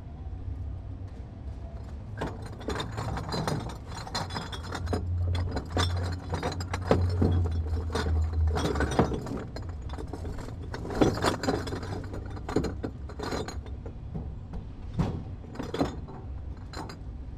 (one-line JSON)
{"title": "Northwest Berkeley, Berkeley, CA, USA - recycling center 3.", "date": "2012-07-19 13:15:00", "description": "surprisingly clean and quiet recycling center ..... beer bottles return worth $14.17", "latitude": "37.88", "longitude": "-122.31", "altitude": "3", "timezone": "America/Los_Angeles"}